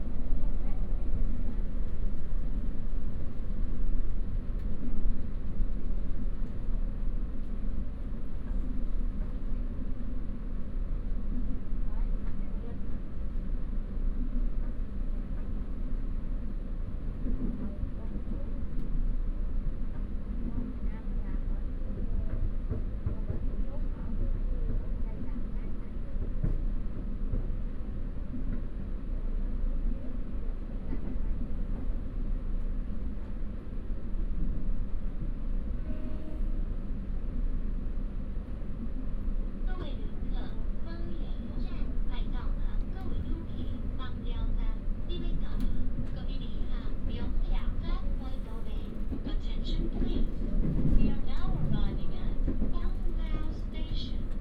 枋寮鄉, Pingtung County - In the train compartment
In the train compartment, Train news broadcast
Pingtung County, Fangliao Township, 中正大路32號, 16 March, ~10:00